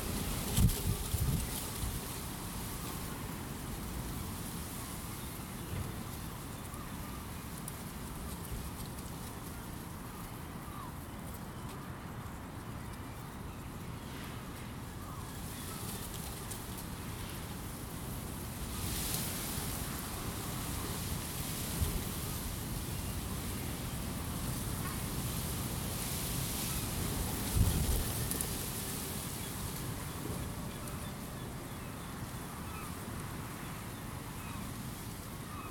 Binckhorst, L' Aia, Paesi Bassi - Bushes and seagulls singing
Bushes and seagulls having fun in the wind. No cars going by, and that is nice for this time. I used a Zoom H2n as recorder and microphone.